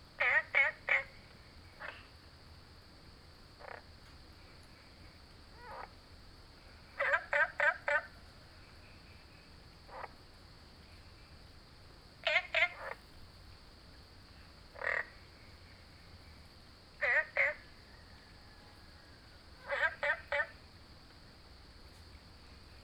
Green House Hostel, Puli Township - Frog chirping
Ecological pool, Frog chirping, Early morning, Crowing sounds
Nantou County, Taiwan, 3 September 2015